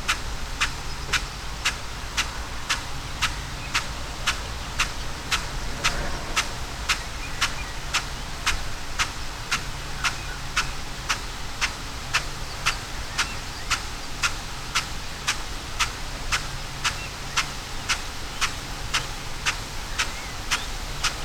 Green Ln, Malton, UK - field irrigation system ...
field irrigation system ... xlr SASS to Zoom H6 ... SASS on back of tractor at the furthest arc of the spray unit before it kicks back and tracks back ...